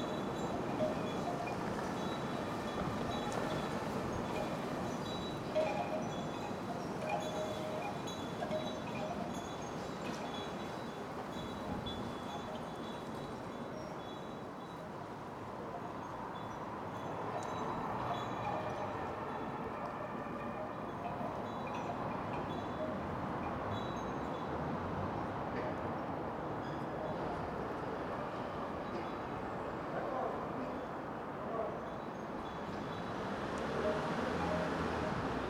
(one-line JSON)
{"title": "Berlin Hobrechtstr. - street ambience", "date": "2011-04-08 20:20:00", "description": "street ambience, wind chimes", "latitude": "52.49", "longitude": "13.43", "altitude": "41", "timezone": "Europe/Berlin"}